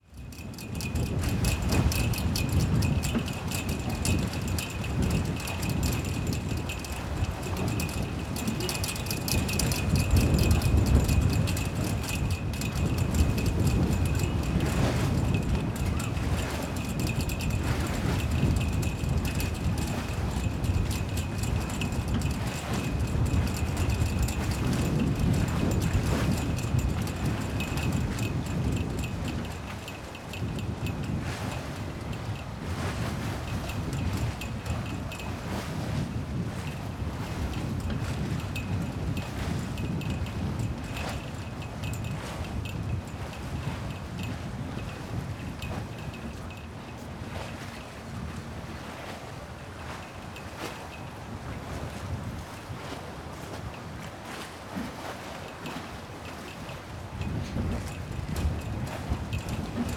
Lisbon, Belém district, at the shore of Tejo - four cables cycle
four cables dancing in the wind and hitting flag poles they are attached to. very strong wind.
Lisbon, Portugal, 27 September